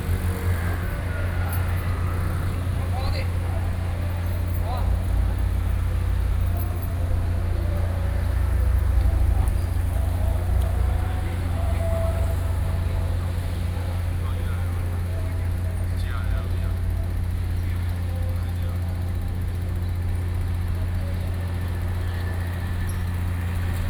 Gangdong Rd., Wanli Dist., New Taipei City - Fishing port